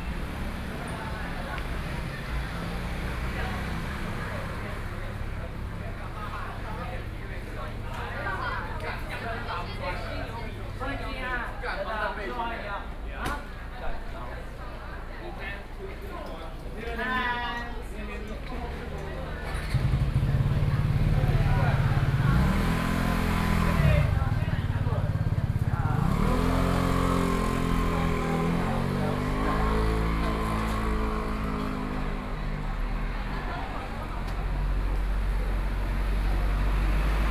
Shijian St., Changhua City - street
Students gather at noon meal streets, Binaural recordings, Zoom H4n+ Soundman OKM II
Changhua County, Taiwan